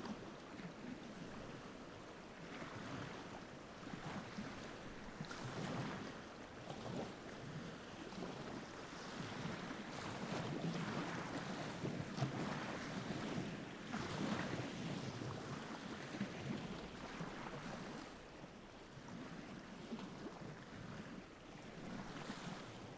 lake Kertuoja, Lithuania, wind
the lake itself just before the storm